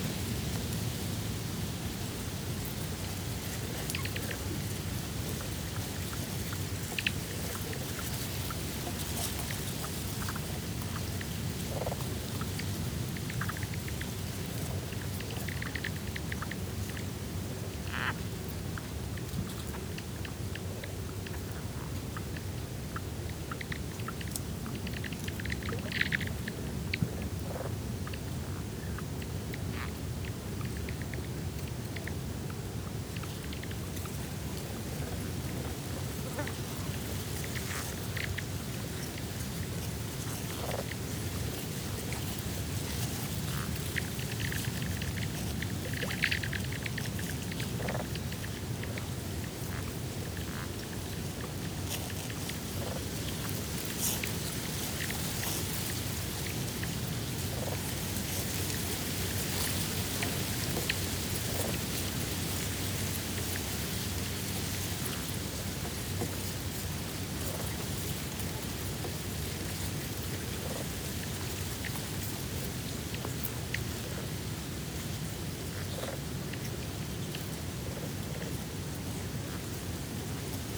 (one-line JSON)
{"title": "새만금 Saemangeum former tidal reedland", "date": "2022-05-01 12:00:00", "description": "새만금_Saemangeum former tidal reedland...this area is now behind the Saemangeum sea-wall and as such has undergone rapid ecological transformation...adjacent former reedland is now in agricultural use...the whole area is under development and transformation", "latitude": "35.80", "longitude": "126.66", "timezone": "Asia/Seoul"}